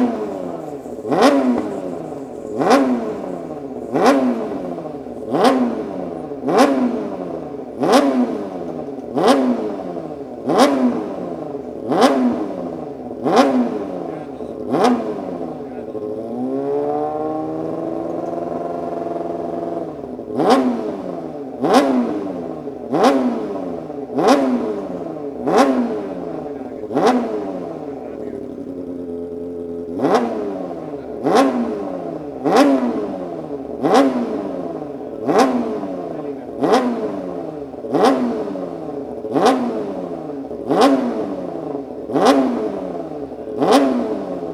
Silverstone Circuit, Towcester, UK - day of champions 2013 ... pit lane walkabout ...
day of champions 2013 ... silverstone ... pit lane walkabout ... rode lavaliers clipped to hat to ls 11 ...